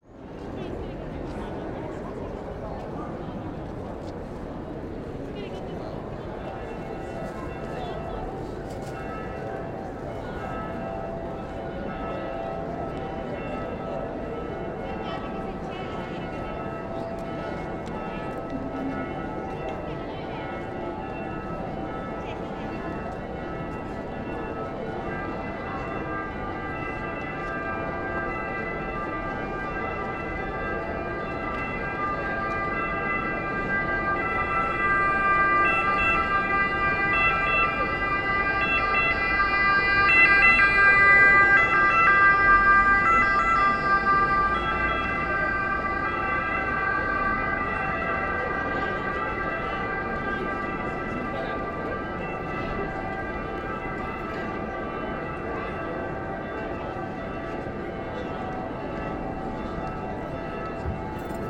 Police alarm, a motorbike, people passing by, bells ringing, and other common sounds of the square Piazza del Duomo.
Italia, European Union, 16 March 2013